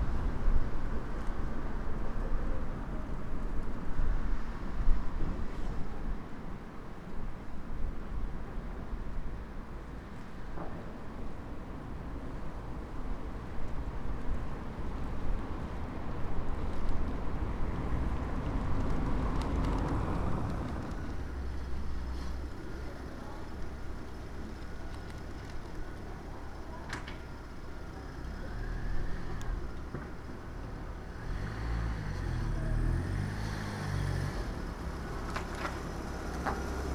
berlin: friedelstraße - the city, the country & me: windy night
windy night, small flags of a nearby toyshop fluttering in the wind, someone warming up the motor of his car, taxis, passers by
the city, the country & me: february 1, 2013
Berlin, Germany